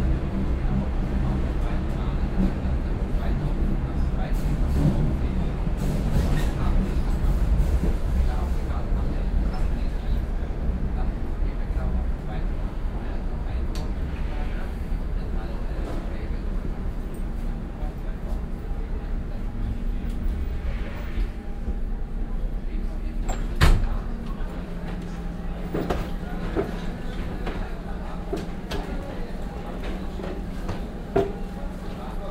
cologne, ebertplatz, ubahnstsation, fahrt zum hbf
soundmap: köln/ nrw
U Bahnfahrt Linie 18 abends, nächste Haltestelle Dom/ HBF
project: social ambiences/ listen to the people - in & outdoor nearfield recordings
2 June